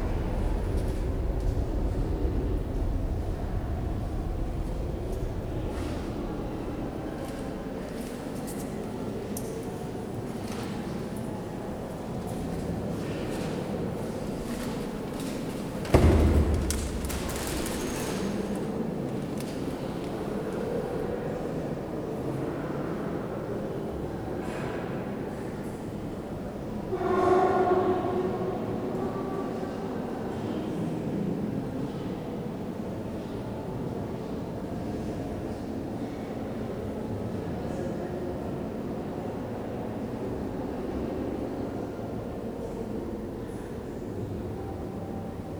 Rue de la Légion dHonneur, Saint-Denis, France - La Basilique de Saint-Denis (Lady Chapel)
The quietest spot in La Basilique de Saint-Denis with an almost constant stream of local people lighting candles and offering prayers (recorded using the internal microphones of a Tascam DR-40).